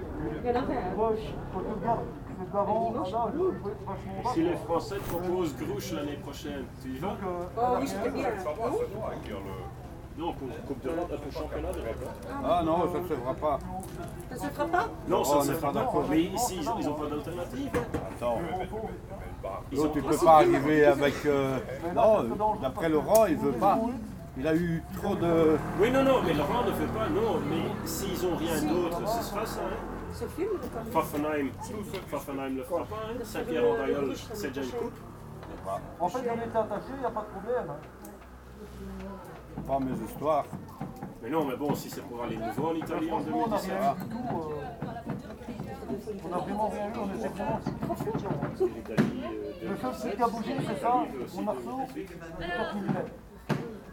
Mont-Saint-Guibert, Belgique - Soapbox race
A soapbox race. This is the departure lane of this race. Gravity racer are slowly beginning to drive.
Mont-Saint-Guibert, Belgium, 13 September 2015